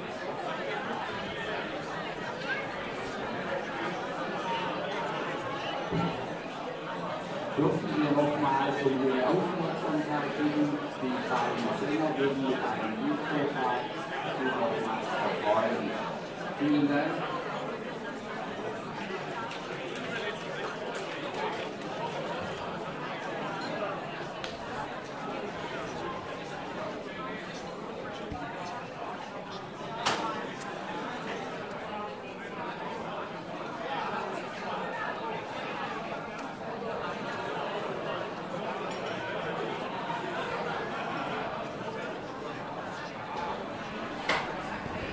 Summer party near city hall in the village of Riet.
Vaihingen an der Enz, Germany, 21 August 2011, 12:45